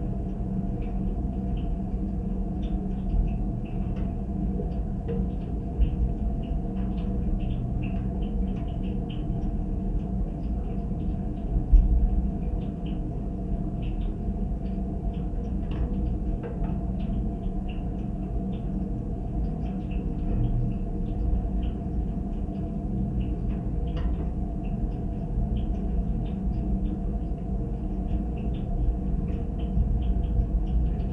sunny day, snow is melting on the roof, geophone on rain pipe